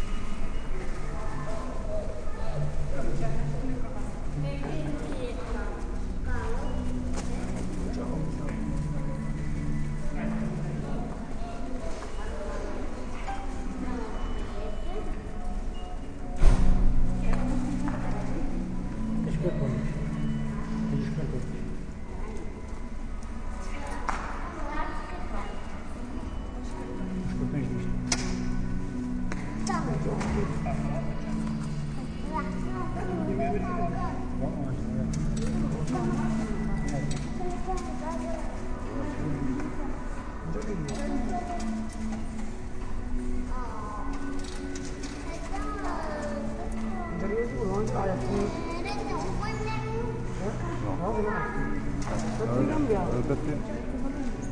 jardim zoologico/metro station
at metro station, loading a travel card. soft music and voices of children